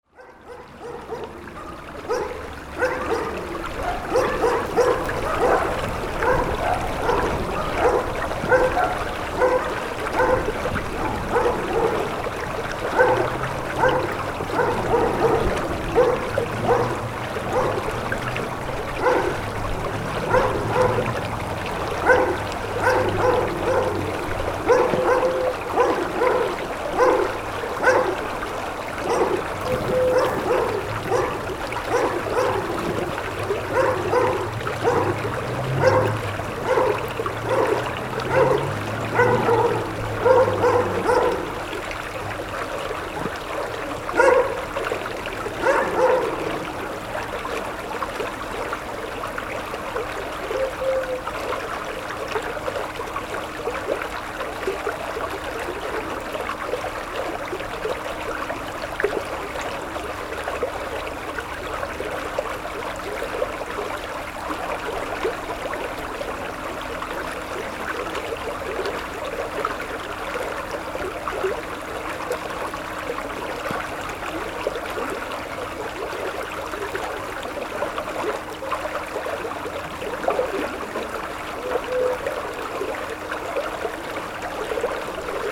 Walhain, Belgique - A dog and a river
Sound of the Nil river and a dog barking.